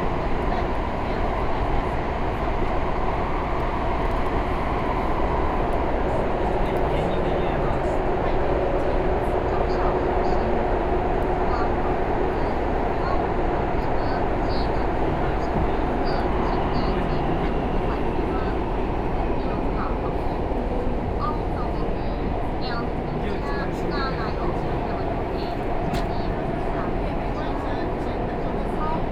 from Zhongxiao Xinsheng station to Zhongxiao Fuxing station, Arrive at the station and then out of the station, Binaural recordings, Sony PCM D50 + Soundman OKM II